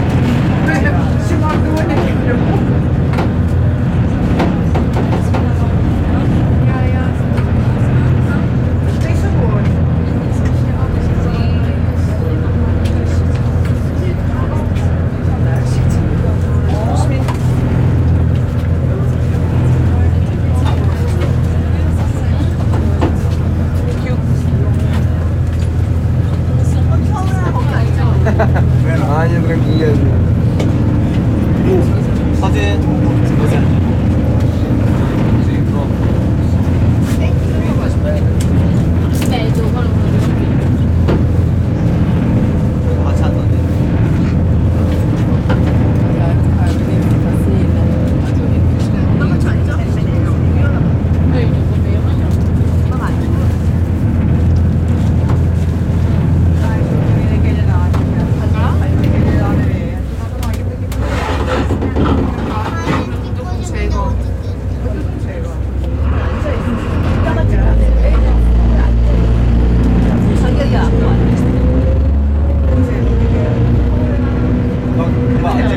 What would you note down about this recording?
Onboard the vaporetto in Venezia, recorded with Zoom H6